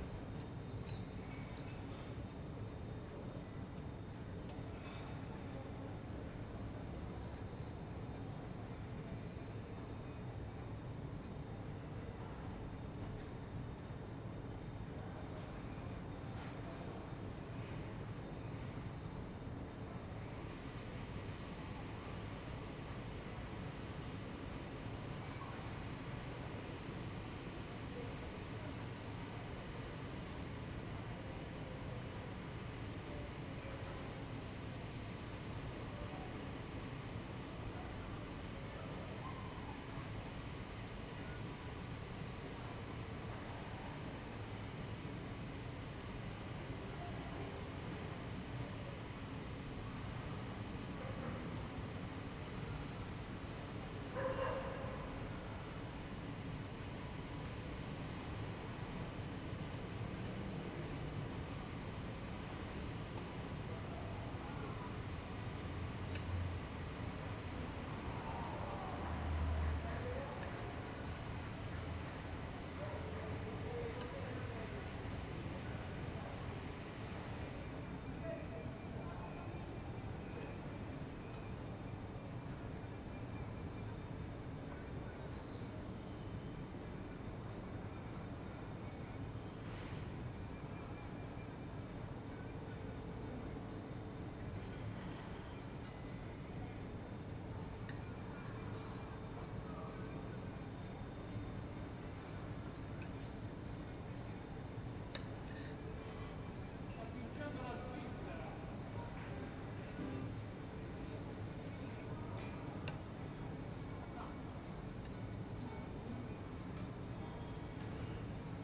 "Terrace at sunset with swallows and guitar recording in the background in the time of COVID19" Soundscape
Chapter CLXXVII of Ascolto il tuo cuore, città. I listen to your heart, city
Monday June 28th 2021. Fixed position on an internal terrace at San Salvario district Turin, More than one year and three months after emergency disposition due to the epidemic of COVID19.
Start at 9:12 p.m. end at 9:24 p.m. duration of recording 13'36'', sunset time at 09:20.
Piemonte, Italia, 28 June 2021